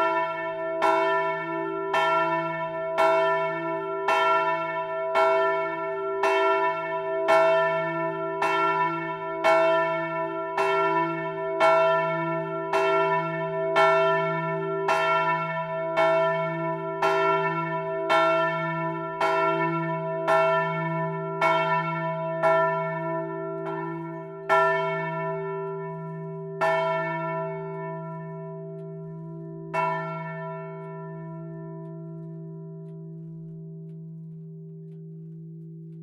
Rue du 13 Août, Tourouvre au Perche, France - Tourouvre au Perche - Église St-Aubin
Tourouvre au Perche (Orne)
Église St-Aubin
Volée cloche 2